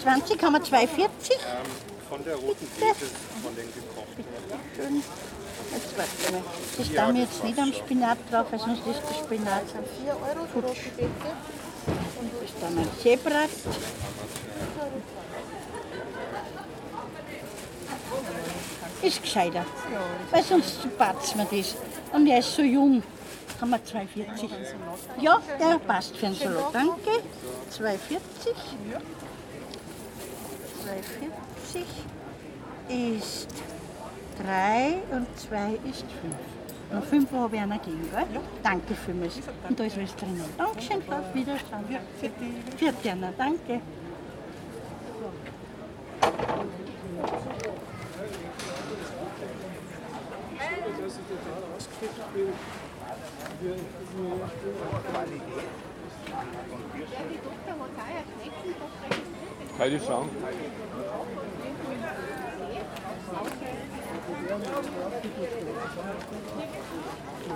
Faberstraße, Salzburg, Österreich - Schranne Salzburg 1
Wochenmarkt in Salzburg, jeden Donnerstag. Weekly market in Salzburg, every Thursday